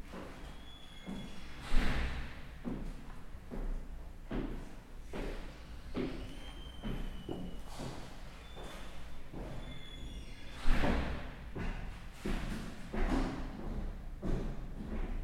Bolton Hill, Baltimore, MD, USA - Stairway to Narnia
A well known stairwell in Fox Building.